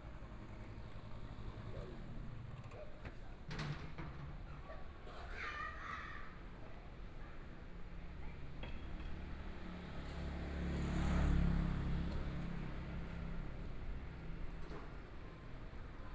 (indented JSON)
{
  "title": "Sec., Xinsheng N. Rd., Zhongshan Dist. - walking on the Road",
  "date": "2014-02-06 18:17:00",
  "description": "walking on the Road, Environmental sounds, Motorcycle sound, Traffic Sound, Binaural recordings, Zoom H4n+ Soundman OKM II",
  "latitude": "25.06",
  "longitude": "121.53",
  "timezone": "Asia/Taipei"
}